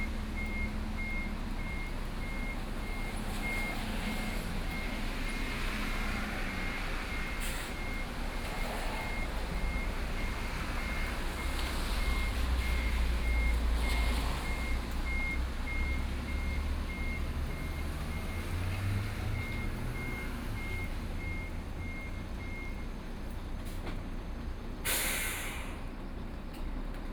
In front of the convenience store, Parking lot, Rainy Day, Small village, Traffic Sound
Sony PCM D50+ Soundman OKM II
Sanxing Township, Yilan County, Taiwan